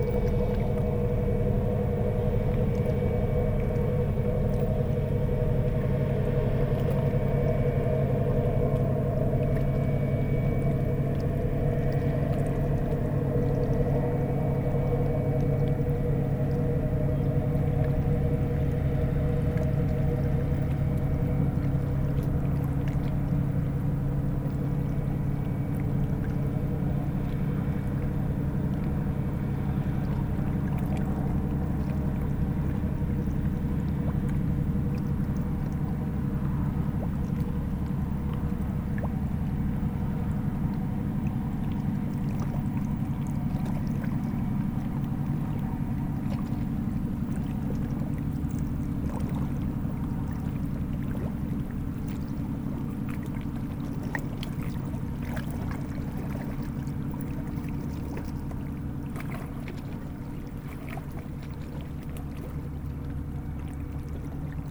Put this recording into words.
An enormous industrial boat is passing by on the Seine river. This boat is transporting gas and is going to Rouen industrial zone.